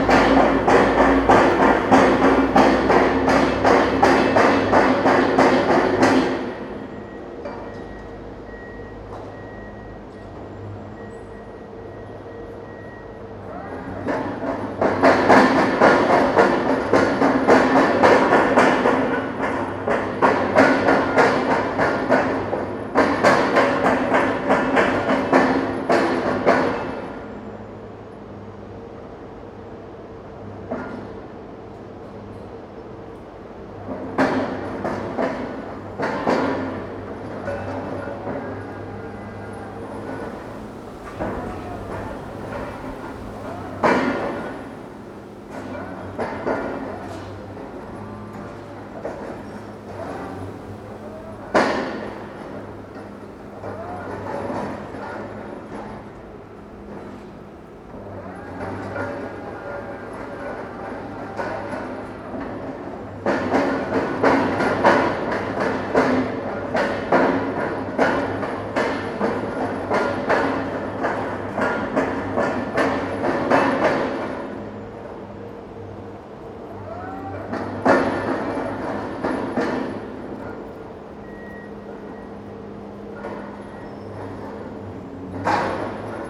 The Loudest Buildingsite in Berlin followed by blessed quiet
Berlin is a city of many buildingsites at the moment. This is loudest Ive yet heard. When the machine finally stops there is a sense of great relief and hearing expands into the quiet.